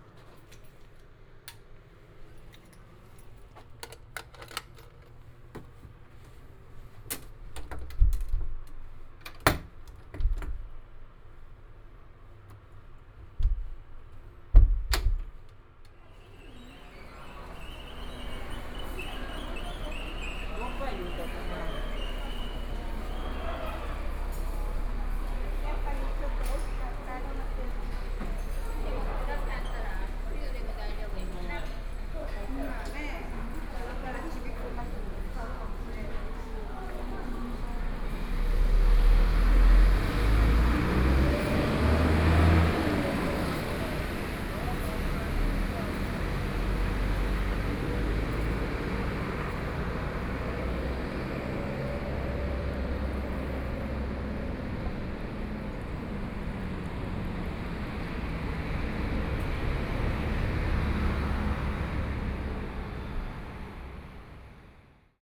Hotel Kingdom, Kaoshiung City - In Hotel
In Hotel, In the hotel lobby
Binaural recordings
Yancheng District, Kaohsiung City, Taiwan, May 2014